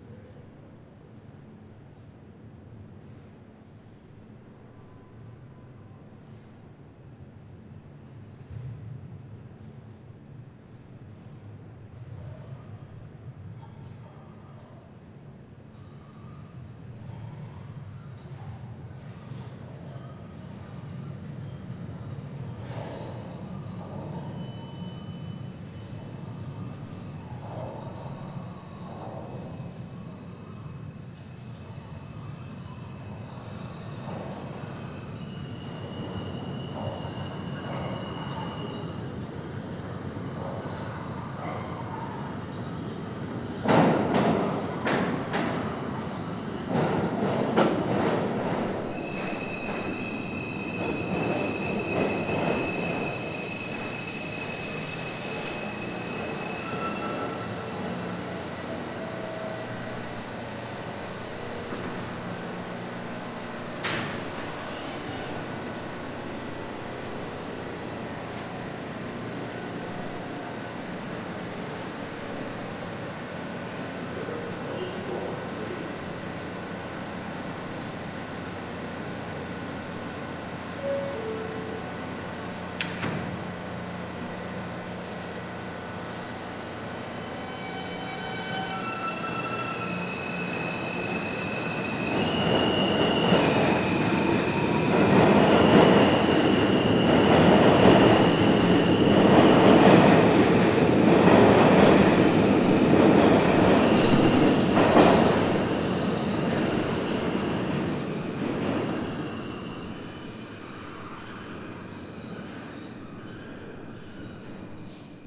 Delancey St, New York, NY, USA - Commuting during COVID-19
Delancey Street/Essex Street train station.
This station connects F, J, and M train lines with people commuting to work from Brooklyn, Queens, and Coney Island. As a result, this station tends to be very crowded, especially during rush hour. This recording captures the soundscape of the station at 6:40 am (close to rush hour) emptied of people due to the Covid-19 quarantine.
Zoom h6
New York, United States of America